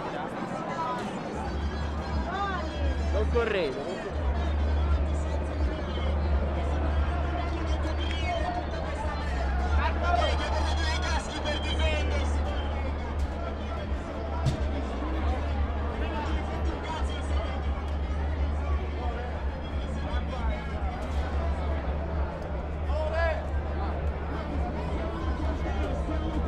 via Labicana
Rome Riot
The explosions are provoked by demostrants' homemade bombs
Rome, Italy